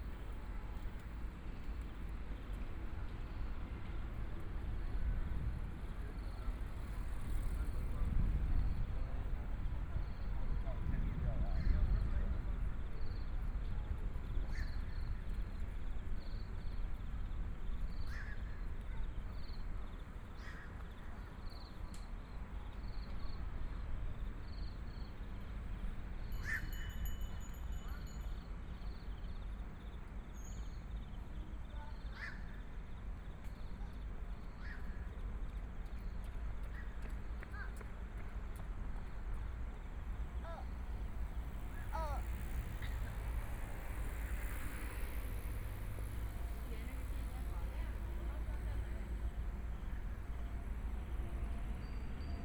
Tamsui District, New Taipei City - Take a walk
Walking along the track beside the MRT, Take a walk, Bicycle voice, MRT trains
Please turn up the volume a little. Binaural recordings, Sony PCM D100+ Soundman OKM II
New Taipei City, Taiwan, 5 April 2014, 18:31